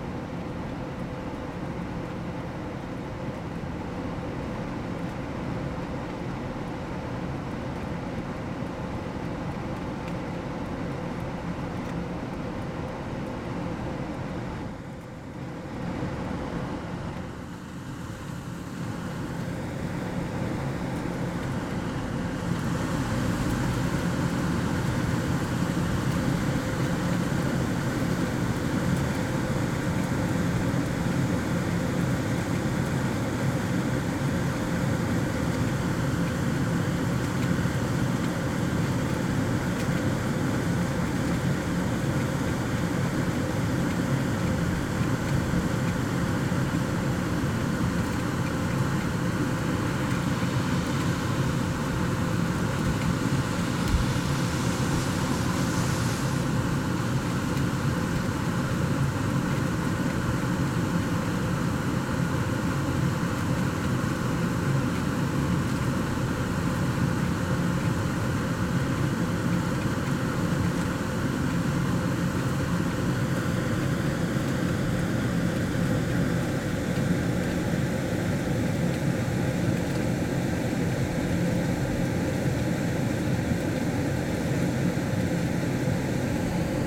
The plastic slats covering a ventilation exhaust unit flap chaotically. Although shoppers regularly pass by this exhaust unit when they go the supermarket, it seems insignificant. Its continuous white noise, however, forms part of those shoppers daily experience. Captured late at night to avoid excess sound interference, this recording aims to represent the ventilation unit's song in its purity.
Zuid-Holland, Nederland, 8 March 2016